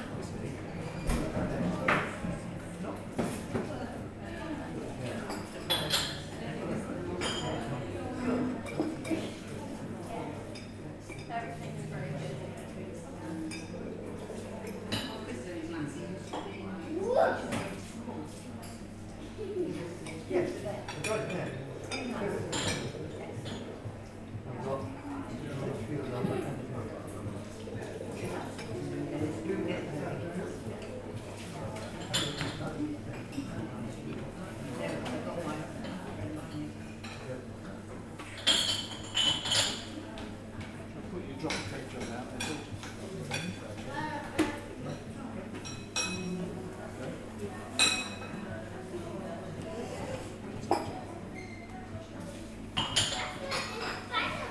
The View is a relatively new building on Teignmouth sea front. The accousics are quite soft as there are sofas and easy chairs in the cafe. Recorded on a Zoom H5.
Teignmouth, UK, 8 September 2017